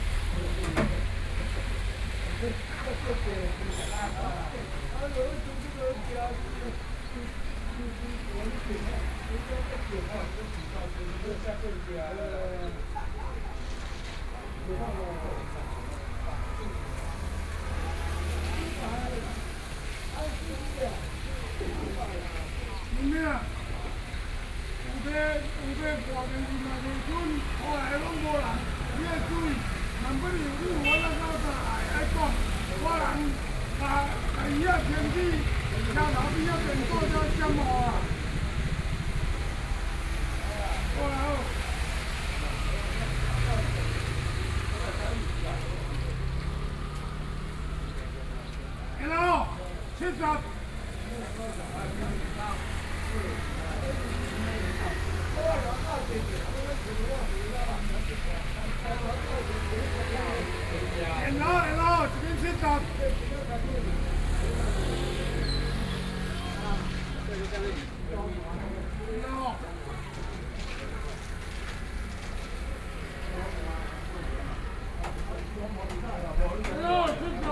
Yugang Rd., Su’ao Township - Selling fish sounds
At intersection, Selling fish sound, rainy day, Zoom H4n+ Soundman OKM II